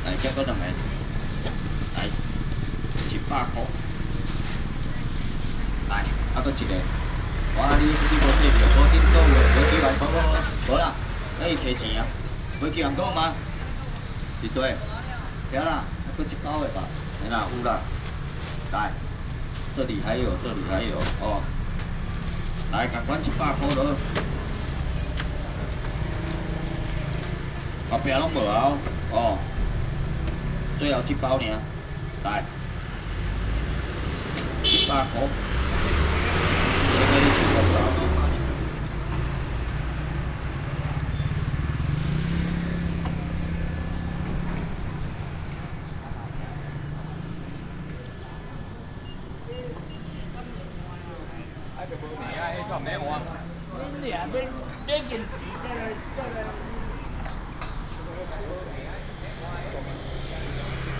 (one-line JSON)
{"title": "Zhongzheng Rd., Xuejia Dist., Tainan City - Traditional market block", "date": "2019-05-15 08:32:00", "description": "In the market block, Fishmonger, Traditional market block, Traffic sound", "latitude": "23.23", "longitude": "120.18", "altitude": "7", "timezone": "Asia/Taipei"}